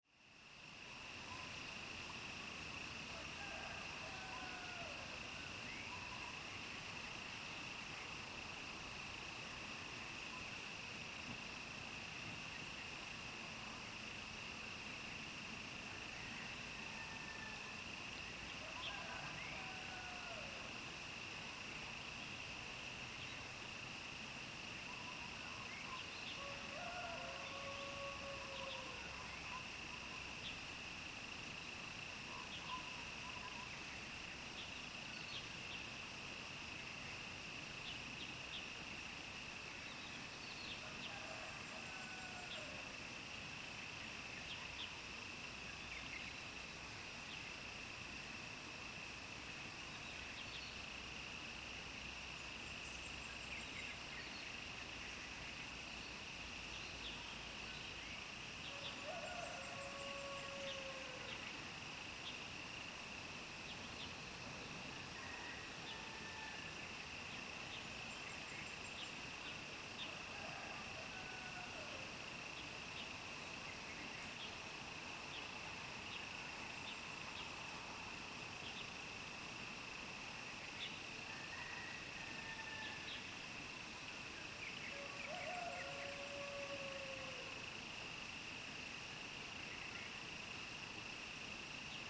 TaoMi Li., 桃米里 Puli Township - Early morning
Bird calls, Insect sounds, Early morning, Crowing sounds
Zoom H2n MS+XY
Nantou County, Puli Township, 桃米巷11-3號, 30 April